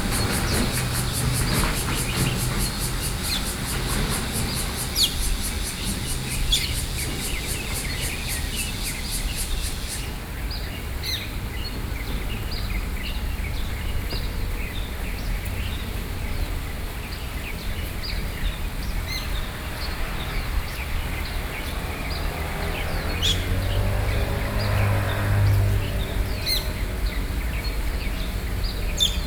Beitou 復興崗, Taipei City - Early morning streets